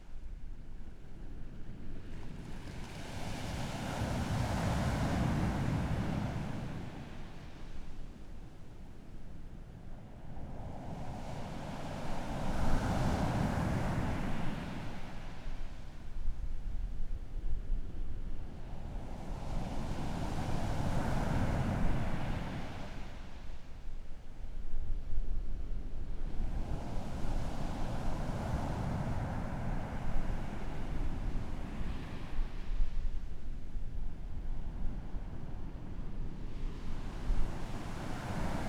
Hualien City, Taiwan - Sound of the waves
Sound of the waves, Zoom H4n+ Rode NT4